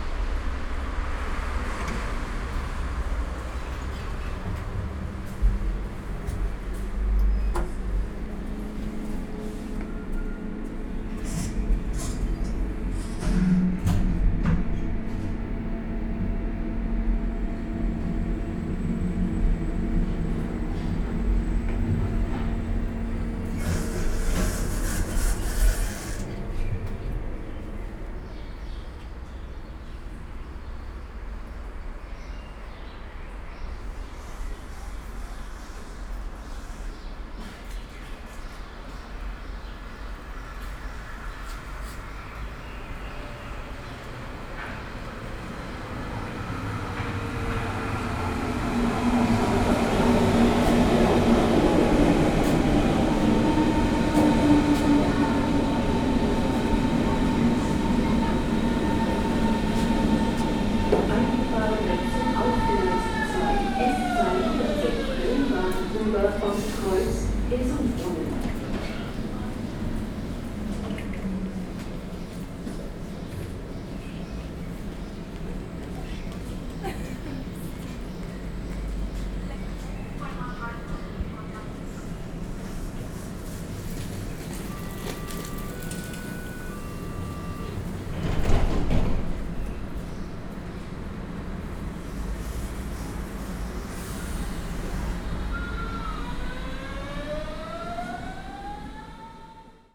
S-Bahnhof Sonnenallee, Neukölln, Berlin - station ambience
ambience at S-Bahn station Sonnenallee, Sunday afternoon
(Sony PCM D50, DPA4060)
Deutschland, European Union, 26 May